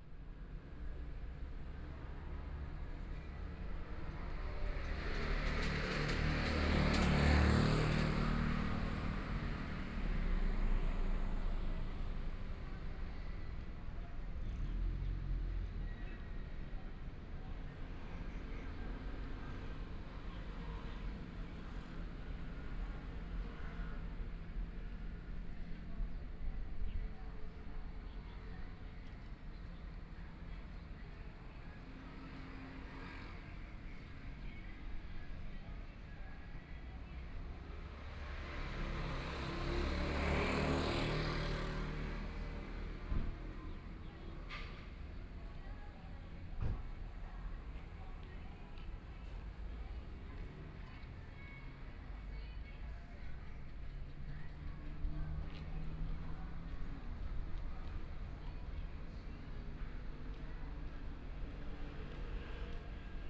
拱北們, Kaohsiung City - Historical monuments
Historical monuments, Birdsong sound, Hot weather, Traffic Sound
Kaohsiung City, Zuoying District, 義民巷1號, 15 May